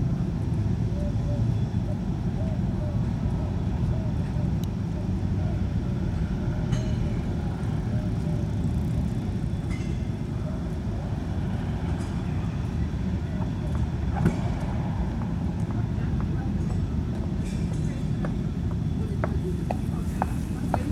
{"title": "Danzig, Polen - Ulica Straganiarska, Danzig - distant music from 30 years' Solidarność festival, passers by", "date": "2010-08-31 17:28:00", "description": "Ulica Straganiarska, Danzig - distant music from 30 years' Solidarność festival, tinkling from glass container, passers by, distant building sites. [I used Olympus LS-11 for recording]", "latitude": "54.35", "longitude": "18.65", "altitude": "8", "timezone": "Europe/Warsaw"}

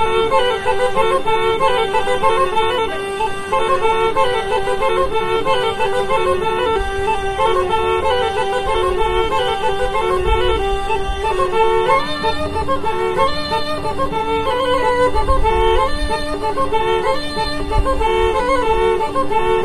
{"title": "M.Lampis: Cabras - Su Ballu Zoppu", "latitude": "39.93", "longitude": "8.53", "altitude": "8", "timezone": "GMT+1"}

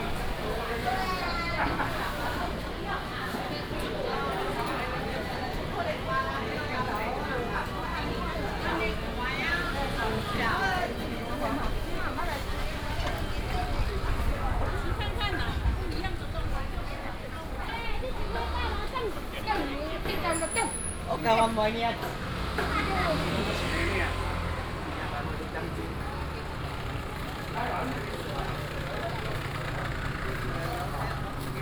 {"title": "Aly., Nanxing Ln., Nantun Dist., Taichung City - vendors peddling", "date": "2017-09-24 10:54:00", "description": "walking in the Traditional Markets, traffic sound, vendors peddling, Binaural recordings, Sony PCM D100+ Soundman OKM II", "latitude": "24.14", "longitude": "120.64", "altitude": "60", "timezone": "Asia/Taipei"}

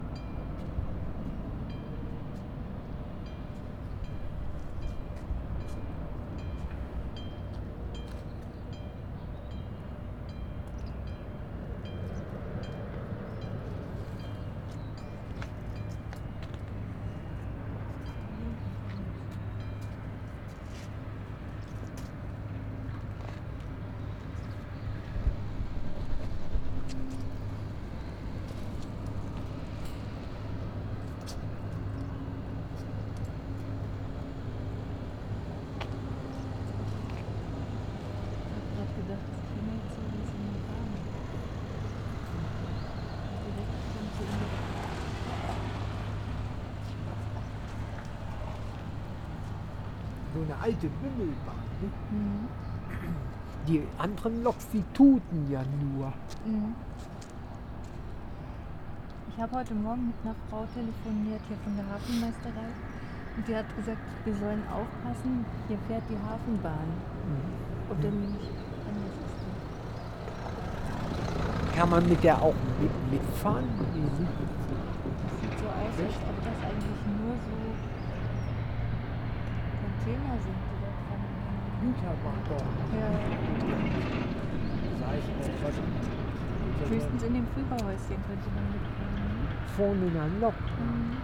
{"title": "Hafen, Braunschweig, Deutschland - die Hafenbahn", "date": "2013-04-15 14:51:00", "description": "Braunschweiger Hafen, Diskussion über die Hafenbahn, Projekt: TiG - Theater im Glashaus: \"über Land und Mehr - Berichte von einer Expedition zu den Grenzen des Bekannten\". TiG - Theater im Glashaus macht sich 2013 auf zu Expeditionen in die Stadt, um das Fremde im Bekannten und das Bekannte im Fremden zu entdecken. TiG, seit 2001 Theater der Lebenshilfe Braunschweig, ist eine Gruppe von Künstlerinnen und Künstlern mit unterschiedlichen Kompetenzen, die professionell erarbeitete Theaterstücke, Performances, Musik und Videofilme entwickelt.", "latitude": "52.31", "longitude": "10.49", "altitude": "67", "timezone": "Europe/Berlin"}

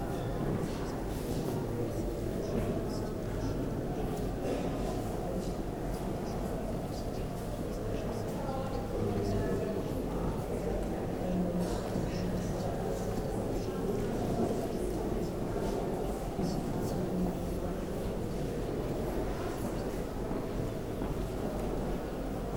{"title": "Düsseldorf, Grabbeplatz, Kunstsammlung NRW, ground floor - düsseldorf, grabbeplatz, ground floor", "date": "2011-01-25 14:25:00", "description": "inside the museum ground floor area.\nvistors passing by speaking, steps, sounds of video documentations nearby.\nsoundmap d - social ambiences, art spaces and topographic field recordings", "latitude": "51.23", "longitude": "6.78", "altitude": "43", "timezone": "Europe/Berlin"}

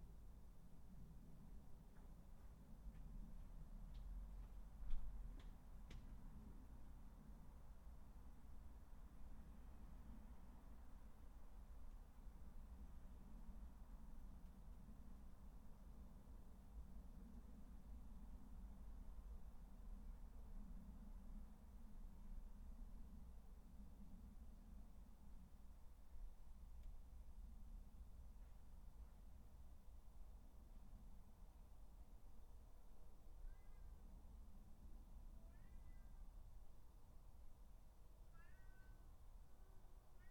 {"title": "Dorridge, West Midlands, UK - Garden 17", "date": "2013-08-13 19:00:00", "description": "3 minute recording of my back garden recorded on a Yamaha Pocketrak", "latitude": "52.38", "longitude": "-1.76", "altitude": "129", "timezone": "Europe/London"}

